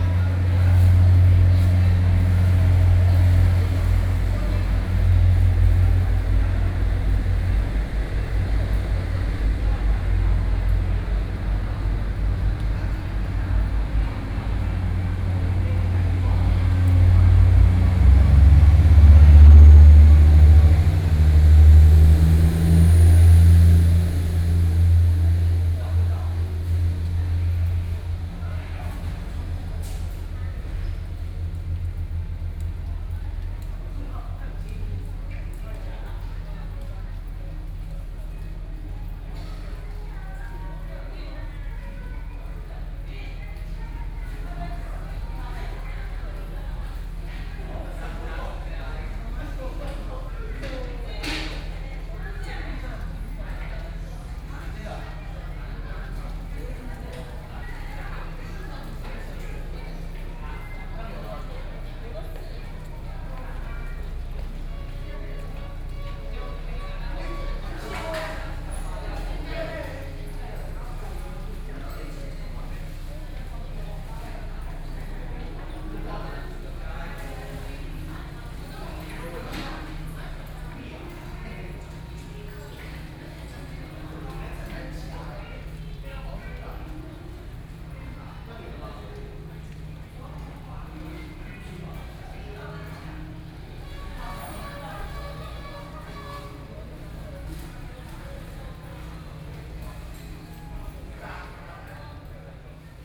Toucheng Station, Taiwan - In the station hall
In the station hall, Train stop noise, Binaural recordings, Zoom H4n+ Soundman OKM II
Yilan County, Taiwan